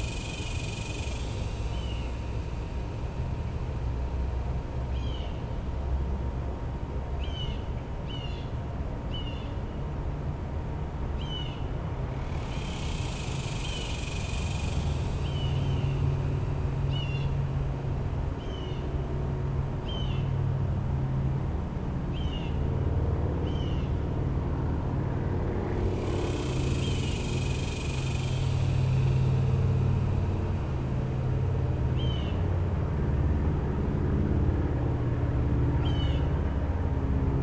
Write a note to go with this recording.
On World Listening Day 2018, Phonography Austin hosted a soundwalk along Shoal Creek, an urban waterway. I left my recorder, a Tascam DR-22 with a Rycote Windjammer, behind, hidden in a bush, about three feet off of the creekbed, in a location that has enough foliage to dampen some of the urban drone.